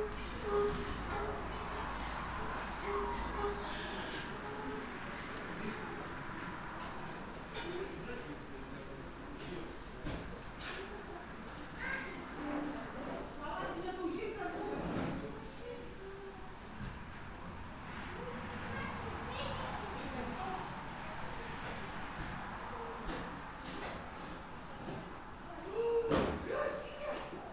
Lithuania, in soviet cars museum
there's old soviet cars museum near Moletai in Lithuania. the cars from it were used in "Chernobyl" series.